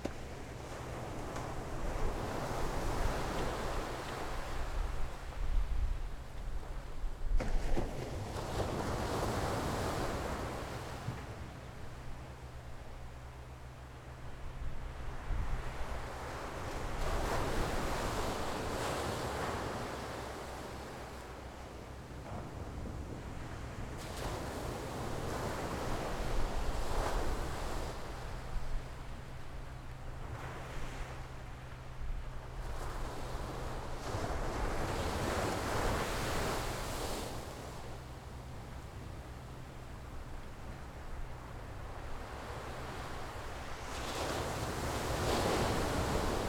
后沃海濱公園, Beigan Township - Sound of the waves
Sound of the waves, Very hot weather, Nearby road under construction
Zoom H6 XY